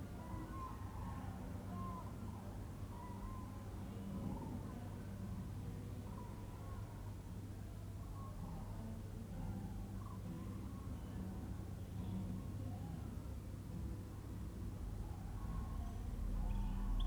Hiddenseer Str., Berlin, Germany - Cranes pass overhead while a neighbour strums guitar
Migrating cranes regularly pass over the city of Berlin trumpeting as they fly in small groups. Maybe they are just flying around, maybe they have set off to Southern Spain. Formerly they would migrate south in October or November and return in late March. Now this sound can be heard almost any month of the year. Because the winters are so much warmer cranes have changed their habits and no longer make such long journeys. There is enough food in the fields in the regions around the city.
Deutschland, 17 October, 12:08